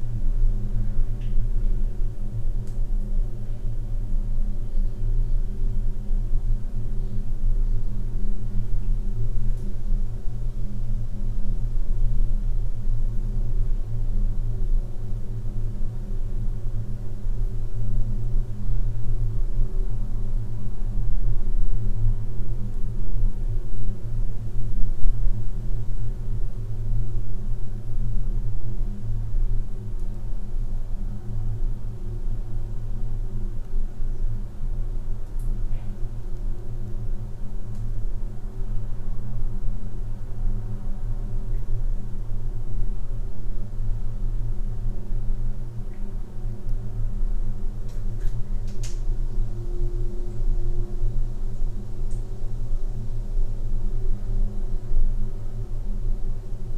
Utena, Lithuania, in the well
microphones in the (abandoned) well